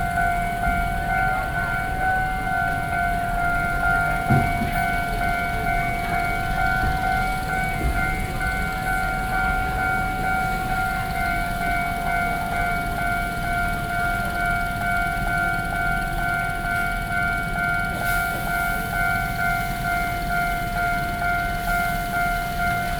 Keelung, Taiwan - Train passes
Traditional market next to the waiting train passes, Binaural recordings